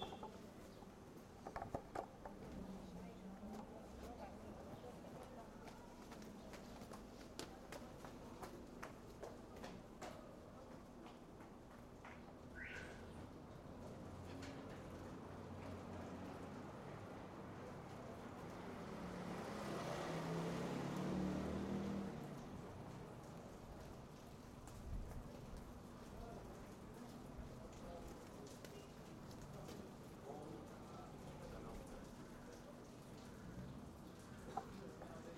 Mea Shearim, Jerusalem, Israel - Passage from courtyard to street
Passage from courtyard to street